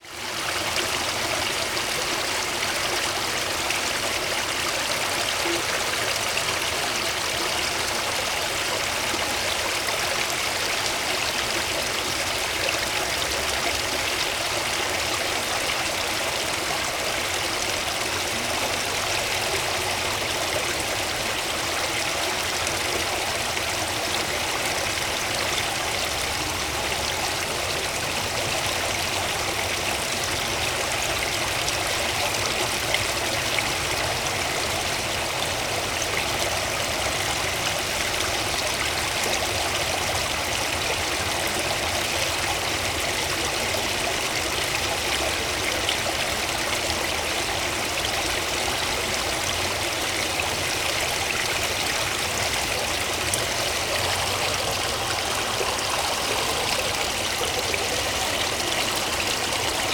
Pyramide du Louvre
pointe du bassin